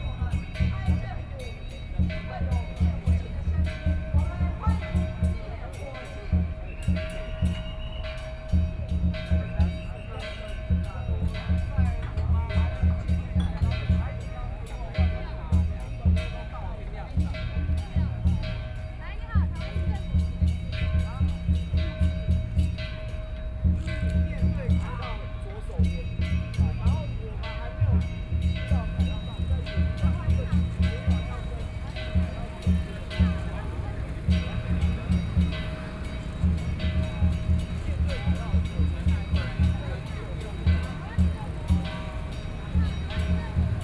Taipei, Taiwan - Protest
Proposed by the masses are gathering in, Sony PCM D50 + Soundman OKM II
Taipei City, Taiwan, 18 August 2013